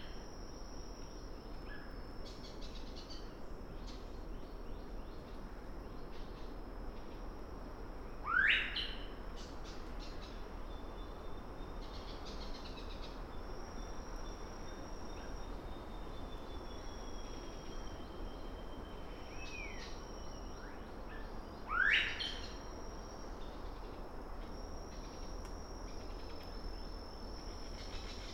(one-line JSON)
{"title": "Vunisea-Namara Road, Kadavu Island, Fidschi - Forest on Kadavu at ten in the morning", "date": "2012-06-28 10:00:00", "description": "Recorded with a Sound Devices 702 field recorder and a modified Crown - SASS setup incorporating two Sennheiser mkh 20 microphones.", "latitude": "-19.04", "longitude": "178.17", "altitude": "120", "timezone": "Pacific/Fiji"}